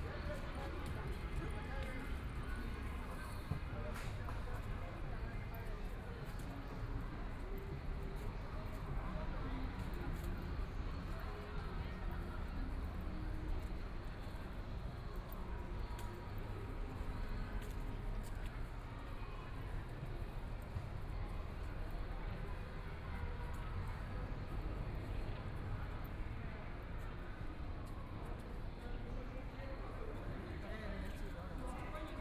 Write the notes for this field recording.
Walking through the park, There are various types of activities in the square residents, Binaural recording, Zoom H6+ Soundman OKM II